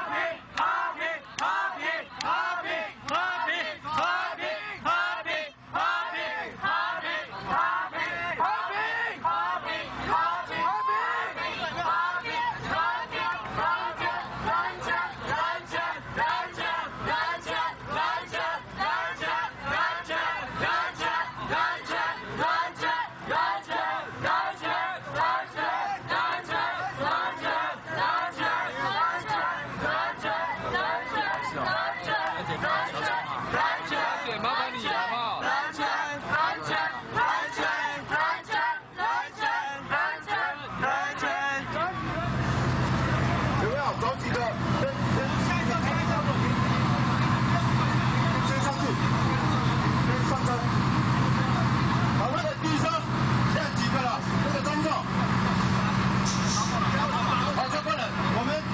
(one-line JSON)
{"title": "Freedom Square, Taiwan - Protest", "date": "2008-12-11 03:41:00", "description": "Police are working with protesting students confrontation, Sony ECM-MS907, Sony Hi-MD MZ-RH1", "latitude": "25.04", "longitude": "121.52", "altitude": "14", "timezone": "Asia/Taipei"}